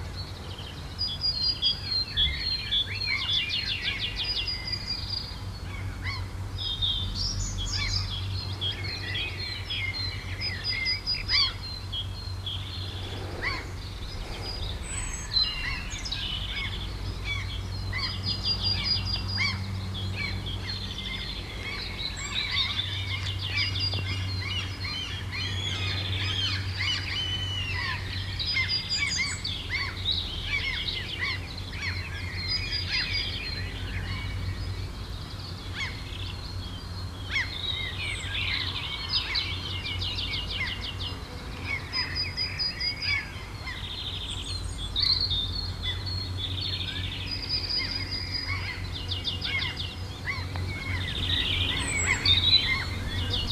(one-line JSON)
{"title": "texel, duinen, in the forest", "date": "2009-07-05 12:09:00", "description": "morning time in a small forest - dense atmosphere with high wind whispers and several bird sounds including seagulls\nsoundmap international: social ambiences/ listen to the people in & outdoor topographic field recordings", "latitude": "53.08", "longitude": "4.76", "altitude": "7", "timezone": "Europe/Berlin"}